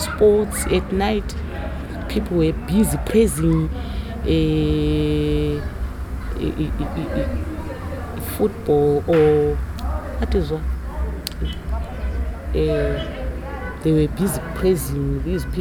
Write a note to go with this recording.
An interview with Ellen Mlangeni, the leader of Thandanani followed under a tree outside their rehearsal room (voices from the other women inside the room are in the background). Ellen tells the story how the group formed and recounts the history of their recordings and successes (you’ll hear the drone of the nearby road into town; and, unfortunately, the midday breeze in my mic in the second track of the interview…) You can find the entire list of recordings from that day archived here: